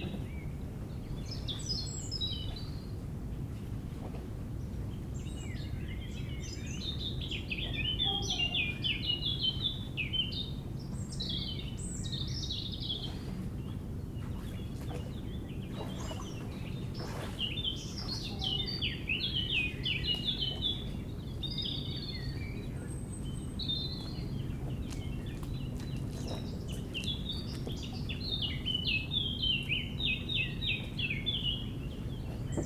Saint-Pierre-de-Curtille, France - Rivage
Sur le rivage de la côte sauvage du lac du Bourget, fauvette, rouge-gorge, bateaux, bruits de circulation sur la rive Est, trains....